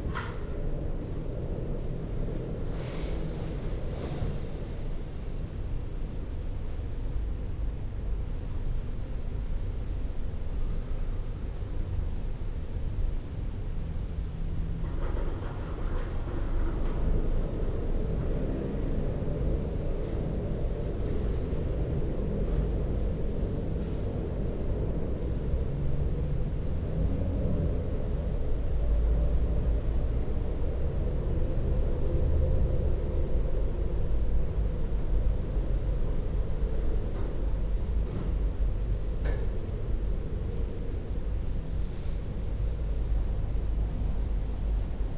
Sachsenhausen-Nord, Frankfurt am Main, Deutschland - Frankfurt, museum, private space
Inside a private flat of the museum in the morning time. The outside slightly windy morning atmosphere filling the silent room. In the distance bathroom noises.
soundmap d - social ambiences and topographic field recordings